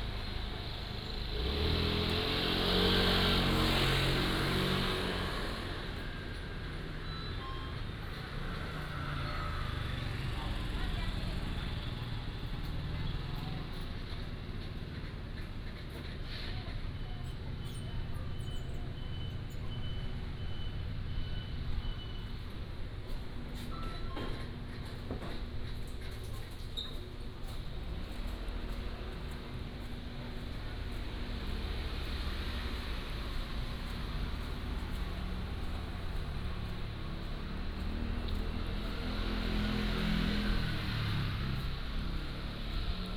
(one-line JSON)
{"title": "Fuxing Rd., Jinhu Township - small Town", "date": "2014-11-03 15:51:00", "description": "Town, Shopping Street, In front of convenience stores, Traffic Sound", "latitude": "24.44", "longitude": "118.42", "altitude": "27", "timezone": "Asia/Taipei"}